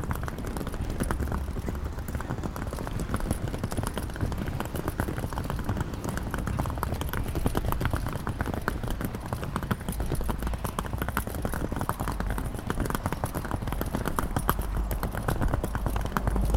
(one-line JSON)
{"title": "St. Gallen (CH), walking with suitcase", "description": "walking with a trolley, changing subsoil (pebbles, concrete etc.). recorded june 8, 2008. - project: \"hasenbrot - a private sound diary\"", "latitude": "47.43", "longitude": "9.38", "altitude": "663", "timezone": "GMT+1"}